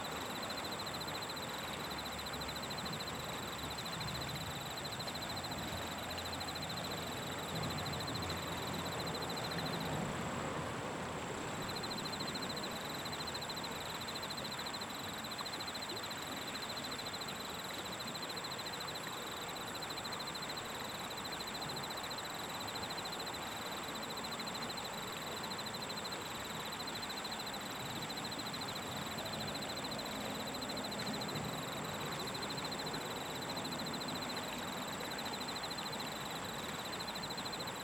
{"title": "대한민국 서울특별시 서초구 양재2동 126 - Yangjaecheon, Autumn, Crickets", "date": "2019-10-27 22:14:00", "description": "Yangjaecheon, Autumn, Crickets\n양재천, 야간, 풀벌레", "latitude": "37.47", "longitude": "127.03", "altitude": "25", "timezone": "Asia/Seoul"}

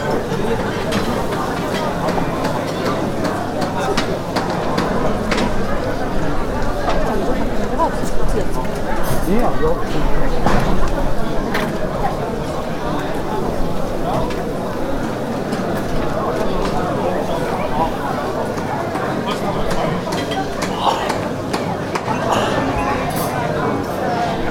{"title": "hupperdange, duarefstrooss", "date": "2011-08-02 18:32:00", "description": "On the street during a public city venue day. The vivid sound of many voices and walking people inside several food and sale stands and information points.\nHupperdange, Duarrefstrooss\nAuf der Straße während einem Ortsfest. Das lebendige Geräusch von vielen Stimmen und umher laufende Menschen an mehreren Essens- und Verkaufsständen und Informationspunkten. Aufgenommen von Pierre Obertin während eines Stadtfestes im Juni 2011.\nHupperdange, Duarrefstrooss\nDans la rue pendant une fête locale. Le bruit vivant de nombreuses voix et des gens qui courent dans toutes les directions, sur des stands d’alimentation, de vente et d’information. Enregistré par Pierre Obertin en mai 2011 au cours d’une fête en ville en juin 2011.\nProject - Klangraum Our - topographic field recordings, sound objects and social ambiences", "latitude": "50.10", "longitude": "6.06", "altitude": "504", "timezone": "Europe/Luxembourg"}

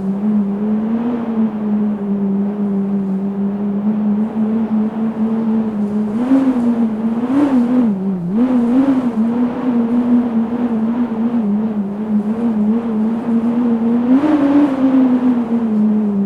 {"title": "Quartier Villeneuve - Village-Olympique, Grenoble, France - le chant du vent", "date": "2013-12-14 12:20:00", "description": "The song of the wind.\nIt is quite rare to meet a lucky configuration that allows us to hear (& record) not only the sound of the wind but also its song. Here is one where the wind flow is set in resonance by the slit under a door just like the mouth of a pipe organ, and then resonates, with all its variations of height, rythm and intensity, along the large corridor of the building.", "latitude": "45.16", "longitude": "5.73", "altitude": "226", "timezone": "Europe/Paris"}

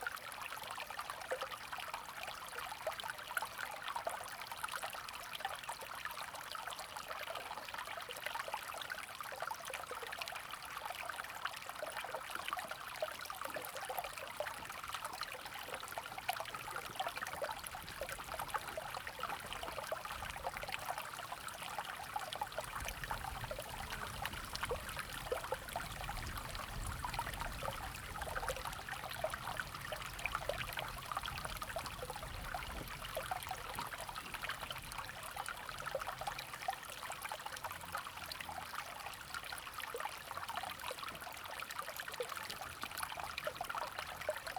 {"title": "乾溪, Puli Township, Nantou County - Sound of water", "date": "2016-04-26 12:33:00", "description": "Sound of water\nZoom H2n MS+XY", "latitude": "23.97", "longitude": "120.90", "altitude": "490", "timezone": "Asia/Taipei"}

